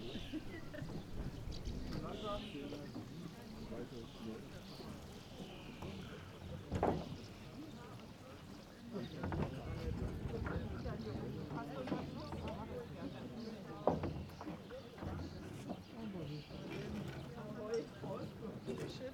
Groß Neuendorf, Oder, Anleger / quay
little tourist ferry arrives, man invites people for a 1h trip, 5 euro per person, dogs free.